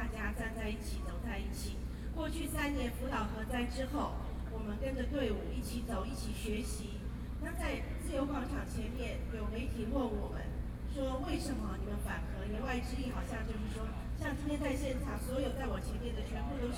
Taipei, Taiwan - Protest
Protest against nuclear power, Zoom H4n+ Soundman OKM II, Best with Headphone( SoundMap20130526- 8)